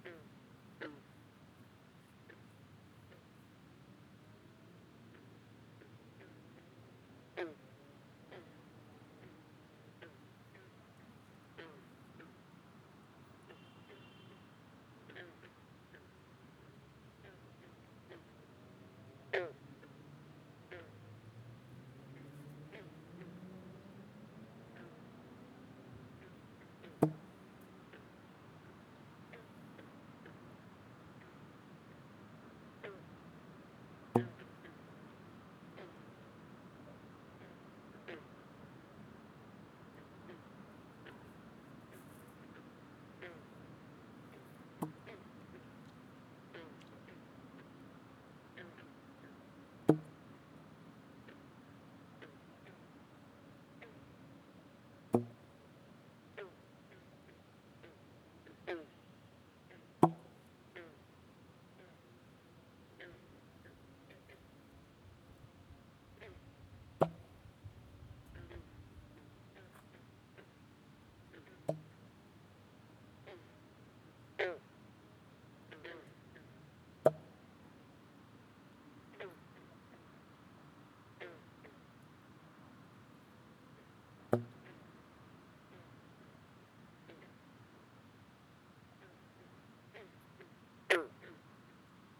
Taylor Creek Park, East York, ON, Canada - Sax with frogs
Went on a late-night walk up to the local creek with the intention of trying to play quiet saxophone sounds along with bullfrogs in a pond beside the recreational trail. Fortunately, I discovered a closer one than my intended destination which suited my purpose equally well. Since it was fairly near a main road bridge that spans the valley there is more traffic noise than I would've liked, but probably not much worse than my original site.